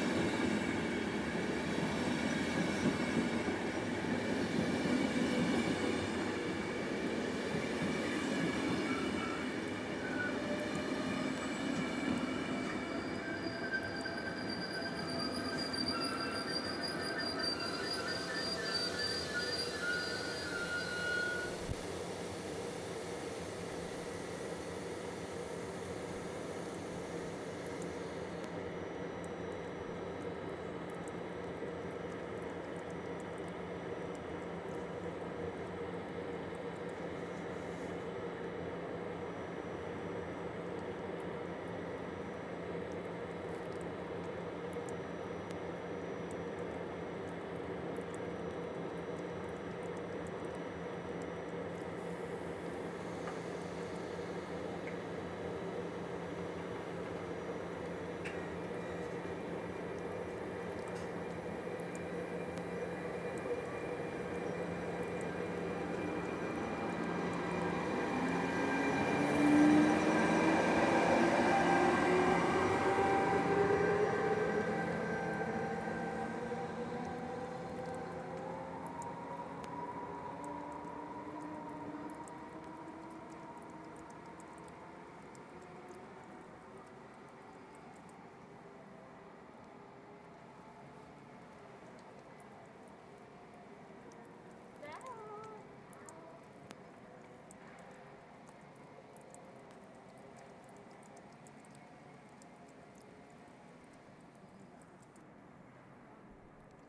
Via Gioacchino Rossini, Cantù Asnago CO, Italy - Train station with train announcement.
High speed train incoming, then an announcement on the loudspeaker, sounds of road works, an airplane and a second train.
Recorded on a Zoom N5. Low-pass filter.
ig@abandonedsounds